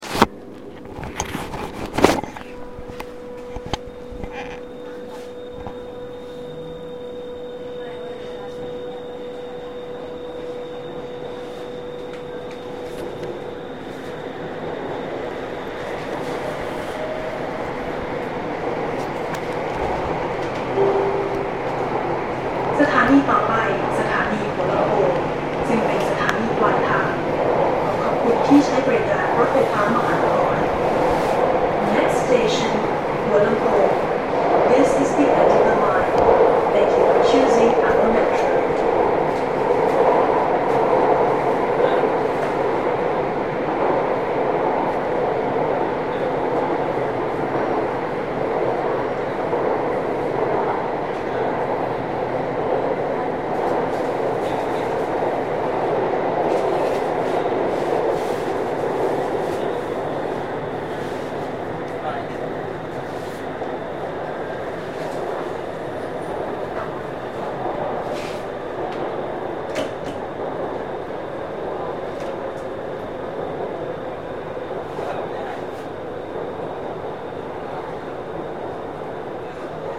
MRT Hua Lamphong station
MRT Hua Lamphong in Bangkok, Thailand, 24, Jan, 2010